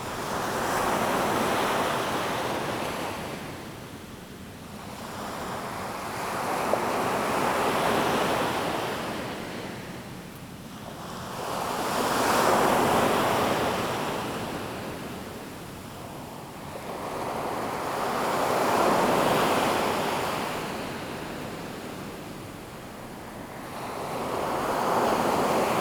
Qianshuiwan Bay, New Taipei City, Taiwan - At the beach
Aircraft flying through, Sound of the waves
Zoom H2n MS+H6 XY